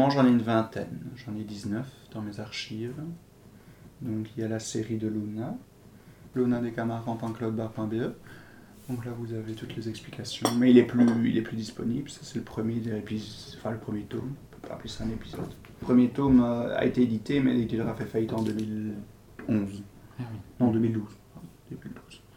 Court-St.-Étienne, Belgique - Claude Barre
Fragment of an interview of Claude Barre, who write books. He explains why he writes detective stories.